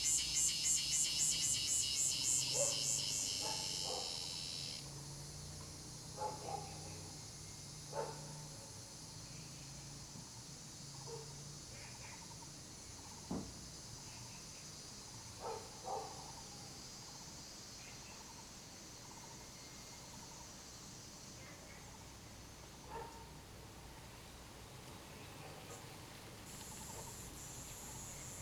綠屋民宿, 桃米里 Puli Township - Hot weather
Hot weather, Cicadas sound, Bird calls, Dogs barking
Zoom H2n MS+XY
Nantou County, Taiwan, 10 June 2015